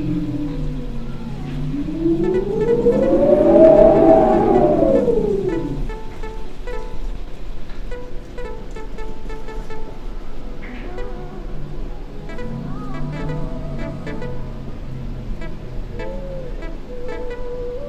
Harfa shoping mall, sound sculptures
The draft under the door and the musical sculptures on the roof of the Harfa Gallery in Liben.
14 December 2010, 14:10